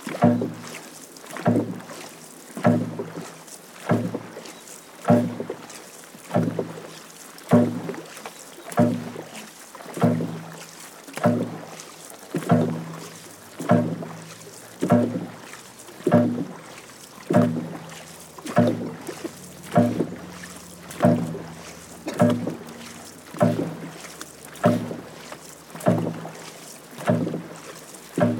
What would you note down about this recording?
As part of the Sounding Lines Art Project we were privileged to be invited to go out paddling with the Suir Dragon Paddlers - CRC is a dragon boat team of breast cancer survivors their friends and families of all ages and abilities, set up in February 2013. Amazing for us to experience the team work and the beautiful sounds and the rhythms created by the paddlers.